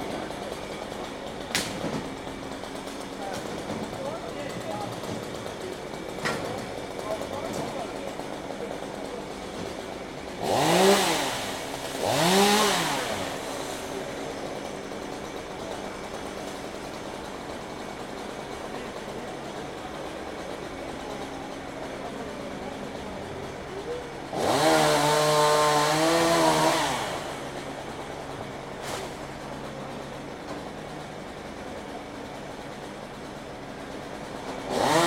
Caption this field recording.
Workers trimming a tree using a truck crane and a chainsaw. People talking in the background. Recorded with Zoom H2n (MS, on a tripod) from the rooftop of a nearby building.